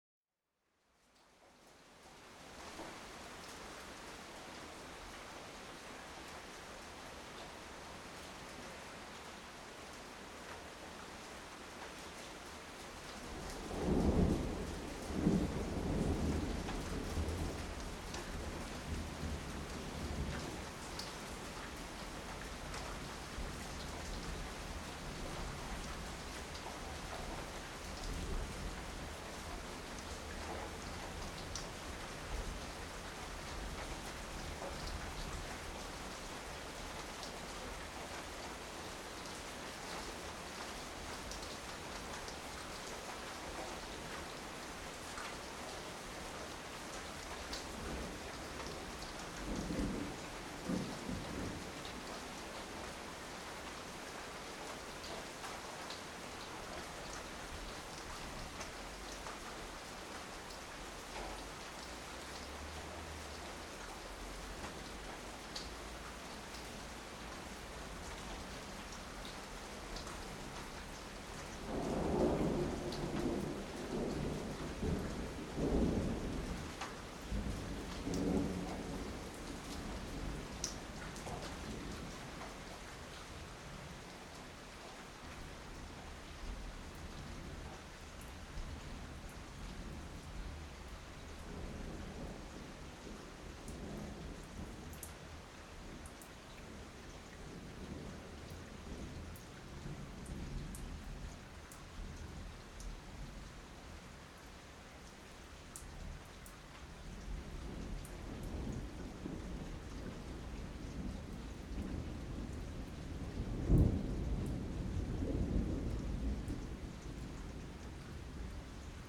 captured from my bedroom window at dawn; rain, thunder, bark...
equipment used: Sony MZ-R70 and ECM-MS907.